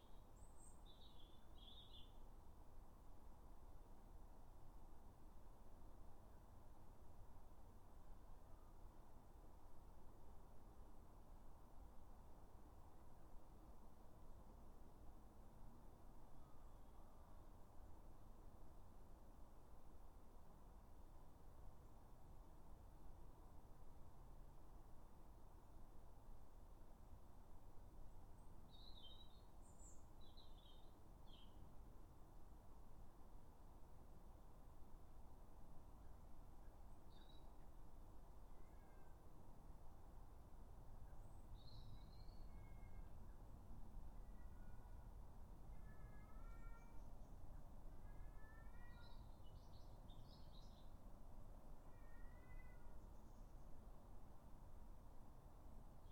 Dorridge, West Midlands, UK - Garden 4
3 minute recording of my back garden recorded on a Yamaha Pocketrak